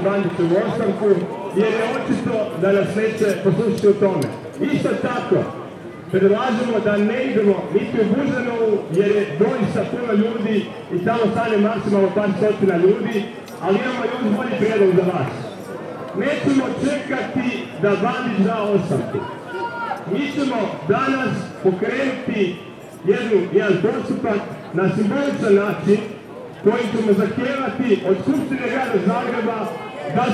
Zagreb, demonstrations against devastation of Varsavska - demanding resignation of mayor
one of the demonstration leaders demanding resignation of the mayor, reactions of citizens